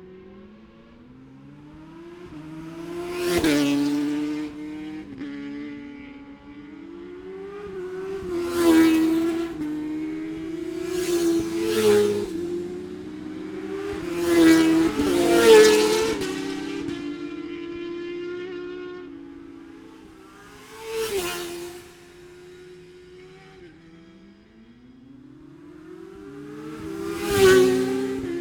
Scarborough, UK - motorcycle road racing 2017 ... 600 ...
600cc practice ... even numbers ... Bob Smith Spring Cup ... Olivers Mount ... Scarborough ... open lavalier mics clipped to sandwich box ...
22 April 2017, ~09:00